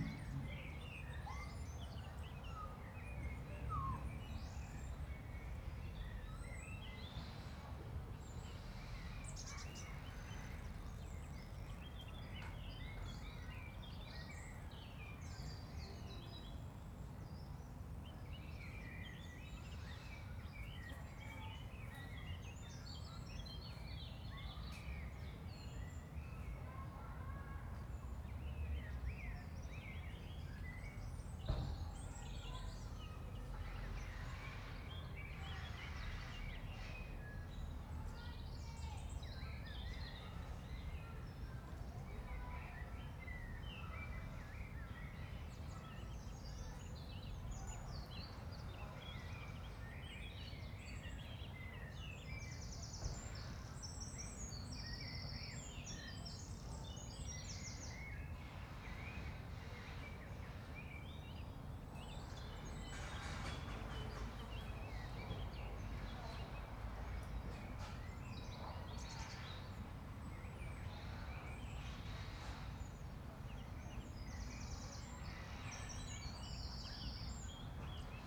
Hampstead Parish Church Churchyard, Hampstead, London - Hampstead Parish Church Churchyard
birds, people chatting, construction site nearby
18°C
5 km/hr 130
Greater London, England, United Kingdom, 30 March